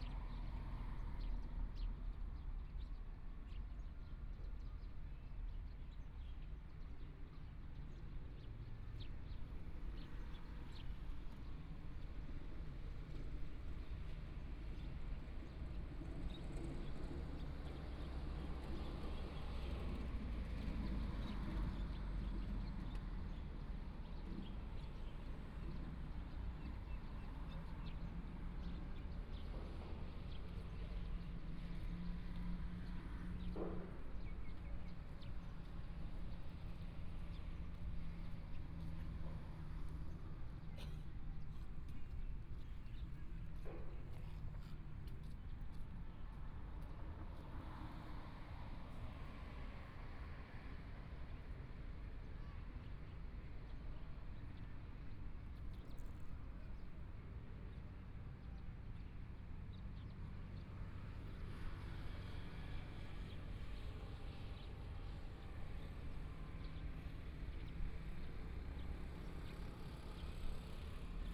花蓮市, Taiwan - In the Square
In the Square, Birds singing, Traffic Sound
Binaural recordings
Zoom H4n+ Soundman OKM II